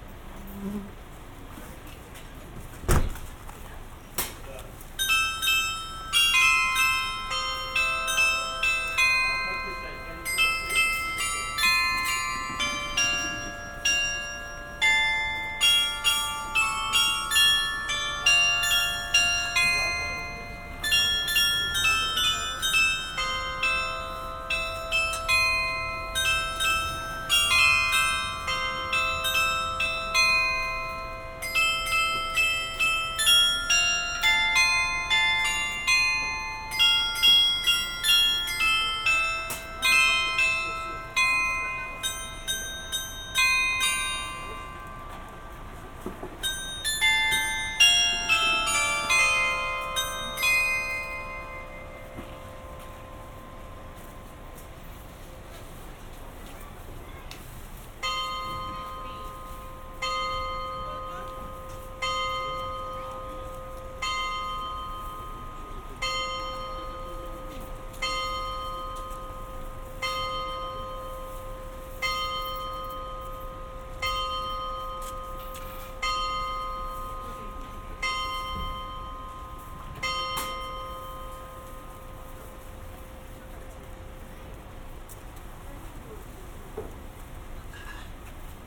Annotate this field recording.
Under the heat of midday in Ventspils bazaar. Carillon. Sennheiser ambeo headset recording